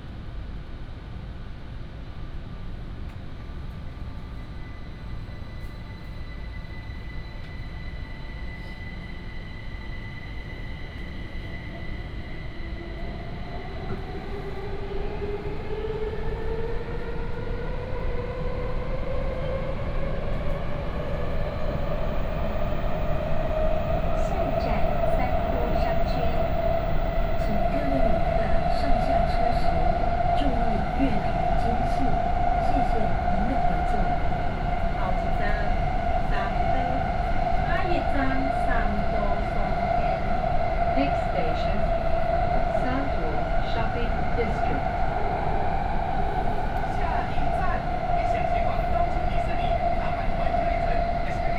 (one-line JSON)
{"title": "苓雅區, Kaohsiung City - Red Line (KMRT)", "date": "2014-05-14 07:56:00", "description": "from Formosa Boulevard station to Sanduo Shopping District station", "latitude": "22.62", "longitude": "120.30", "altitude": "8", "timezone": "Asia/Taipei"}